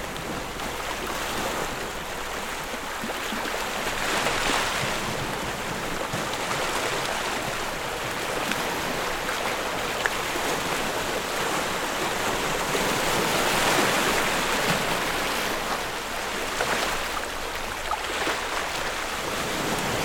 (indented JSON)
{
  "title": "Bd Stephanopoli de Comene, Ajaccio, France - Ajaccio, France Beach 02",
  "date": "2022-07-27 20:30:00",
  "description": "wave sound\nCaptation : ZOOM H6",
  "latitude": "41.91",
  "longitude": "8.72",
  "timezone": "Europe/Paris"
}